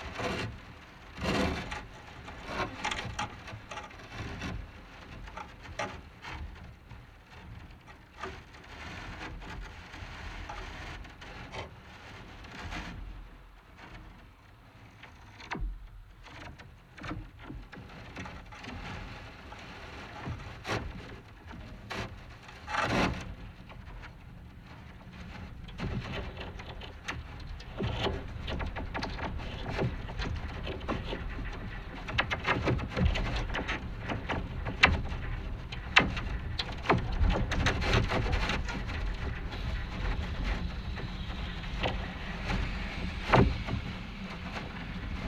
Contact microphone recording of two flagpoles standing next to each other. Four microphones were attached to the cables that are holding the flags, that are highly transmissive of every tiny movement of the flag. Changing direction and strength of the wind results in a vast variety of micro movements, resulting in a jagged and ever-changing soundscape. Recorded using ZOOM H5.